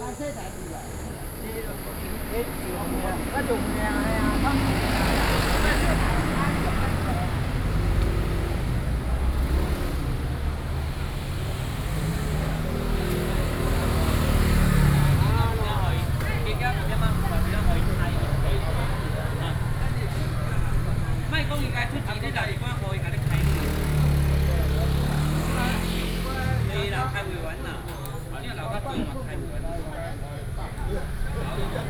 {"title": "隆山路, 茂長里 Sanzhi Dist. - chat", "date": "2012-06-25 12:21:00", "description": "chat, Traffic Sound, Participate in traditional temple processions\nBinaural recordings, Sony PCM D50", "latitude": "25.26", "longitude": "121.51", "altitude": "112", "timezone": "Asia/Taipei"}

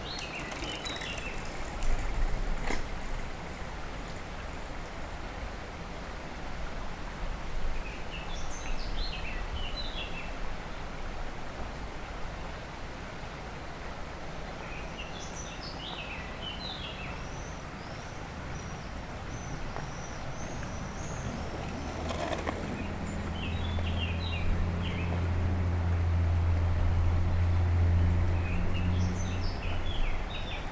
{"title": "Rue des Pélicans, Aix-les-Bains, France - Fauvettes", "date": "2022-07-12 11:30:00", "description": "Près du Sierroz allée Marcel Mailly, les fauvettes sont sans concurrence à cette époque, le niveau du Sierroz est très bas .", "latitude": "45.70", "longitude": "5.89", "altitude": "239", "timezone": "Europe/Paris"}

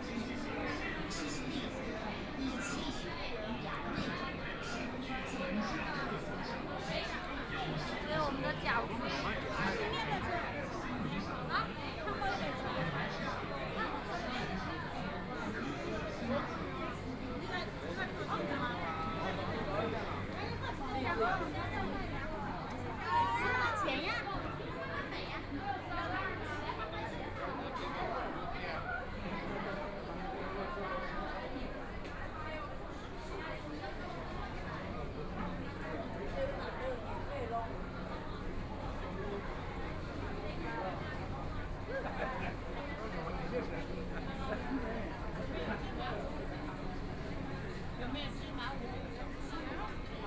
{"title": "Huangpu District, Shanghai - soundwalk", "date": "2013-11-21 15:19:00", "description": "walking around the Yuyuan Garden, The famous tourist attractions, Very large number of tourists, Binaural recording, Zoom H6+ Soundman OKM II", "latitude": "31.23", "longitude": "121.49", "altitude": "11", "timezone": "Asia/Shanghai"}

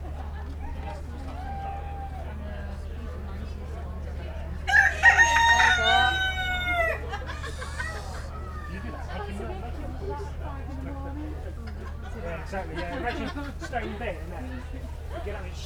{"title": "Burniston, UK - Fur and Feather ... Burniston and District Show ...", "date": "2016-08-29 11:45:00", "description": "Fur and Feather tent ... walking round ... lavalier mics clipped to baseball cap ... calls from caged birds ... people talking ...", "latitude": "54.32", "longitude": "-0.43", "altitude": "38", "timezone": "Europe/London"}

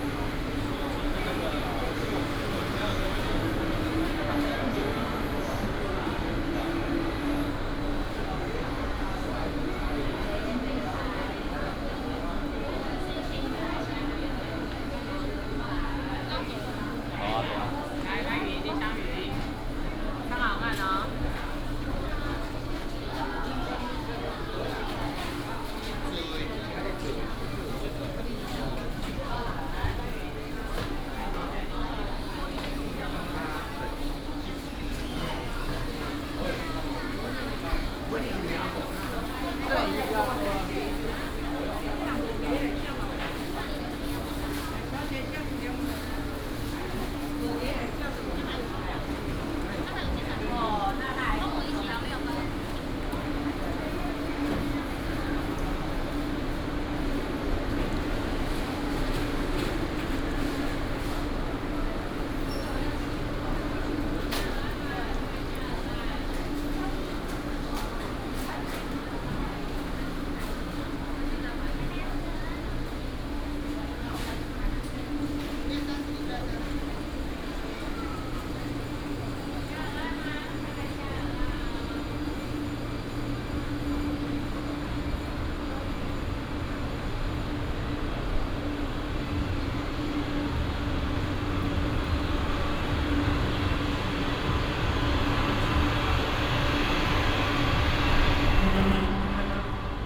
{"title": "南寮觀光漁市, Hsinchu City - Seafood fish market", "date": "2017-08-26 10:40:00", "description": "Seafood fish market, The plane flew through", "latitude": "24.85", "longitude": "120.92", "altitude": "3", "timezone": "Asia/Taipei"}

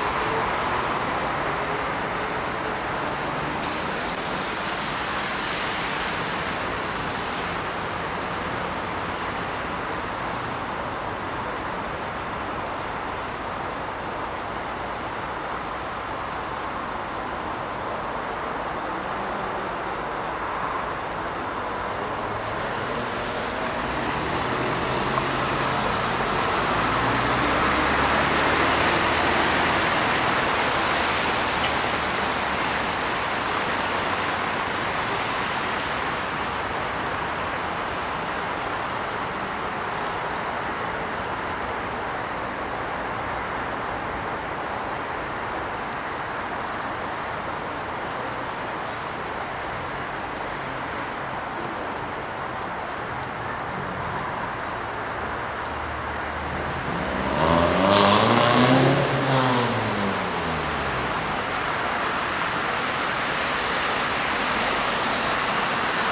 {"title": "ул. Вавилова, строение, Москва, Россия - On Vavilova street", "date": "2020-01-30 21:50:00", "description": "On Vavilova street near Gagarinsky shopping center, where there is a pedestrian crossing. You can hear cars driving on wet asphalt, the snow is melting. Warm winter. Evening.", "latitude": "55.71", "longitude": "37.59", "altitude": "146", "timezone": "Europe/Moscow"}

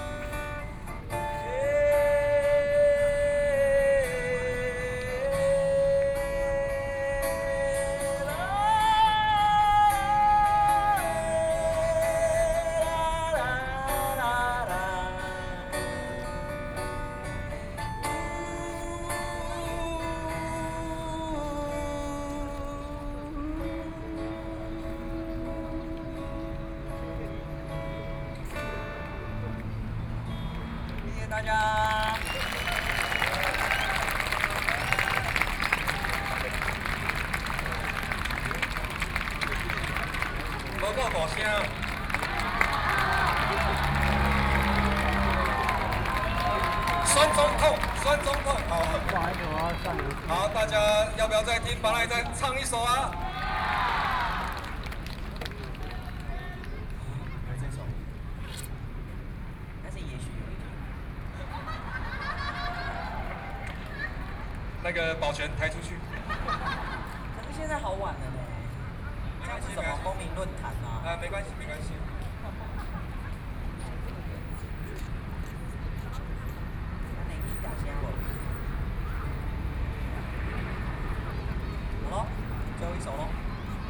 {"title": "National Chiang Kai-shek Memorial Hall, Taipei - Antinuclear", "date": "2013-09-06 20:55:00", "description": "Taiwanese Aboriginal singers in music to oppose nuclear power plant, Sing along with the scene of the public, Aboriginal songs, Sony PCM D50 + Soundman OKM II", "latitude": "25.04", "longitude": "121.52", "altitude": "8", "timezone": "Asia/Taipei"}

